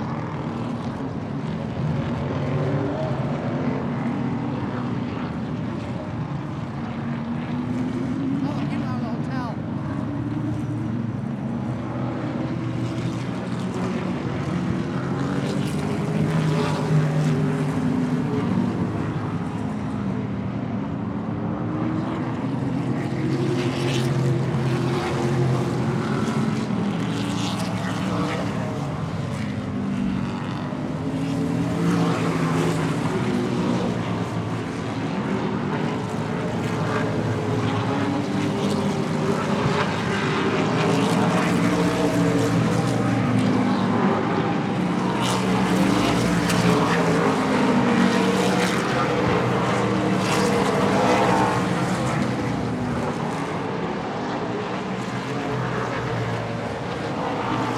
Madison International Speedway - ARCA Midwest Tour Practice
Practice for the Joe Shear Classic an ARCA Midwest Tour Super Late Model Race at Madison International Speedway. There were 29 cars which came out for practice in groups of 5-10
Wisconsin, United States, 1 May 2022